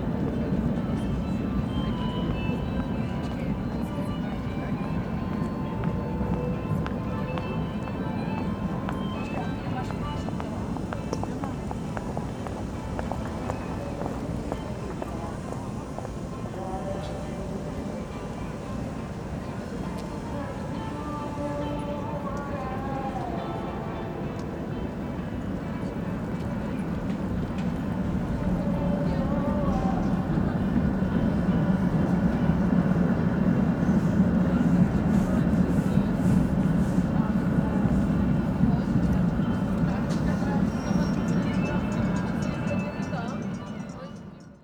Recorded on Zoom H4n + Rode NTG 1, 26.10.
Jihomoravský kraj, Jihovýchod, Česko, 26 October 2015